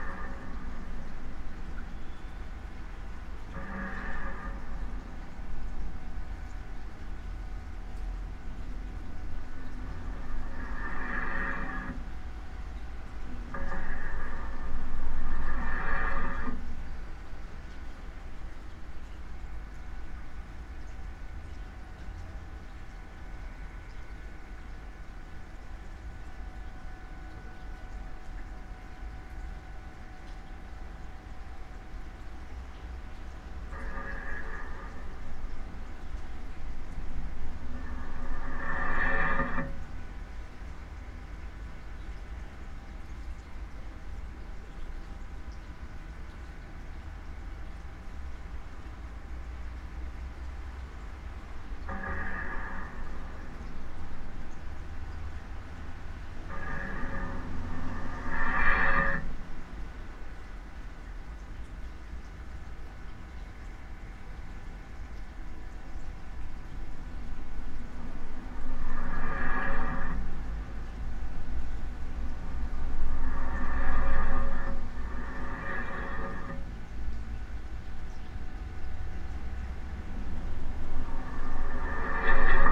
Utena, Lithuania, under the bridge, re-visited
so, after 7 years, I try to make some kind of study of the same bridge again. some changes under the bridge - there's new pedestrian trail...I places omni mics and contact mic on bridge construction and the recordin is the mix of there sources